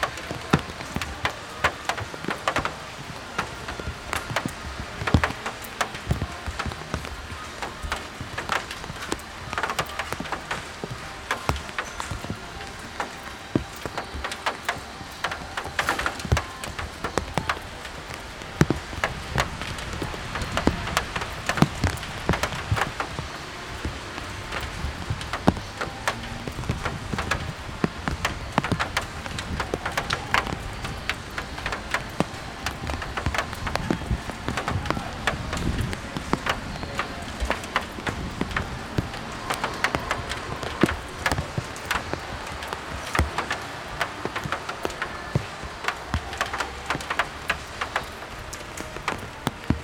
raindrops, walkers, talks, percussive sound from the microphone bag